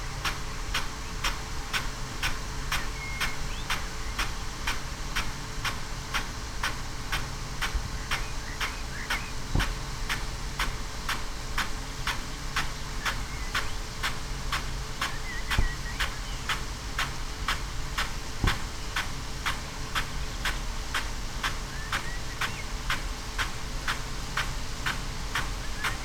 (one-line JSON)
{"title": "Green Ln, Malton, UK - field irrigation system ...", "date": "2020-05-24 06:22:00", "description": "field irrigation system ... xlr SASS to Zoom H6 ... SASS on back of tractor at the furthest arc of the spray unit before it kicks back and tracks back ...", "latitude": "54.12", "longitude": "-0.56", "altitude": "95", "timezone": "Europe/London"}